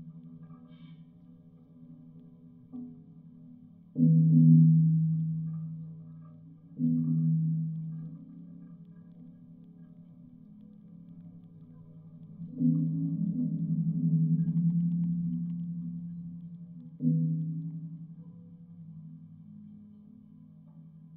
Gaigaliai, Lithuania, a column of warehouse
contact microphones on a column of half abandoned warehouse
October 2018